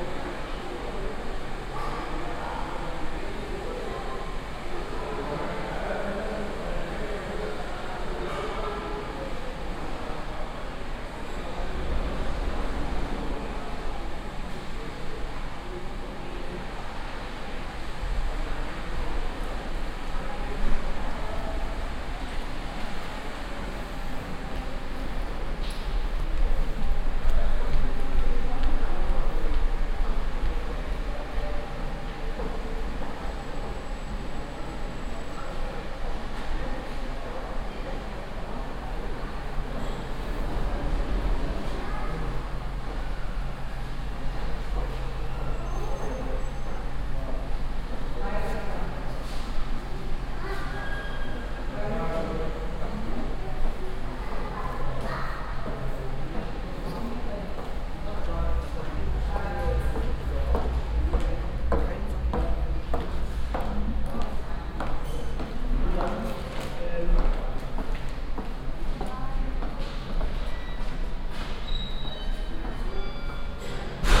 essen, theatre passage
Inside a passage with stores for more expensive clothes, a hair stylist and some doctors. A modernistic, cold reverbing stone and glass architecture.
An artificial laugh in an empty atmosphere. In the distance the clock bell play of the shopping zone.
Projekt - Stadtklang//: Hörorte - topographic field recordings and social ambiences
Essen, Germany, 9 June 2011, ~12:00